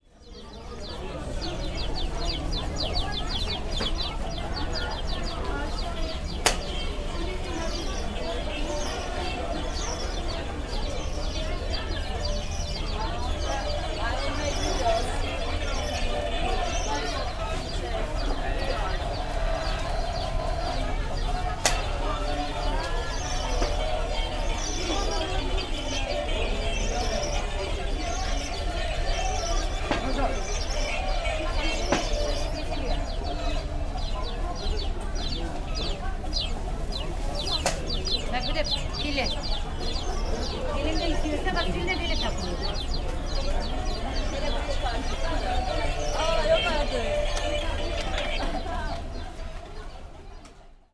Istanbul Galata passage market: chirping toys
27 June 2008, 00:21